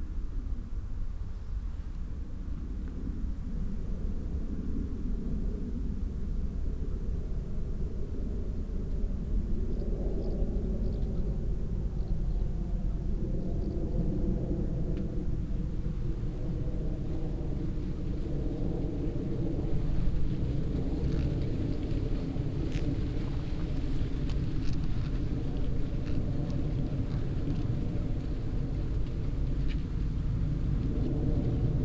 tandel, corn field
Inside a corn field. The silent atmosphere and the sound of the leaves, that move in the mild late summer wind. On a path nearby a horse moving uphill. Then the sound of a plane passing the sky.
Tandel, Kornfeld
In einem Kornfeld. Die stille Atmosphäre und das Geräusch der Blätter, die sich im milden Spätsommerwind bewegen. Auf einem angrenzenden Weg geht ein Pferd den Hügel hinauf. Dann das Geräusch von einem Flugzeug am Himmel.
Tandel, champ de maïs
Dans un champ de maïs. L’atmosphère immobile et le bruit des feuilles que déplace le vent d’une douce journée de fin de l’été. Sur un chemin adjacent, un cheval monte dans la colline. Puis le bruit d’un petit avion traversant le ciel.
Luxembourg, September 2011